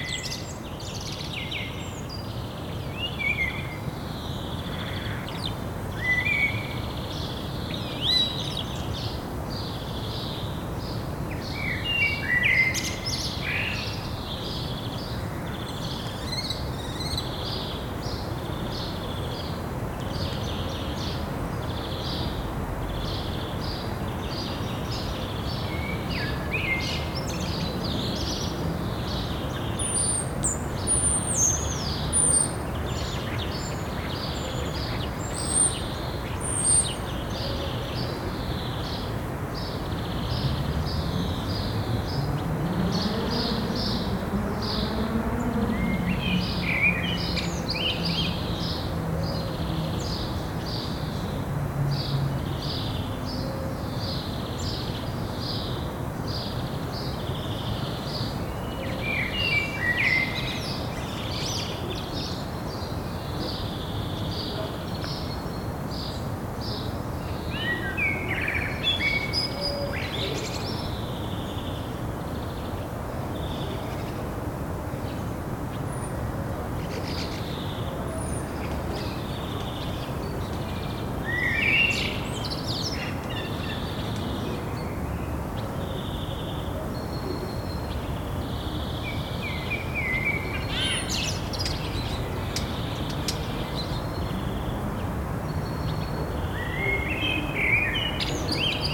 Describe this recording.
old hospital, birds, in the distance the noise of the city, people walking, motorcycle, and car, Captaation : ZOOMh4n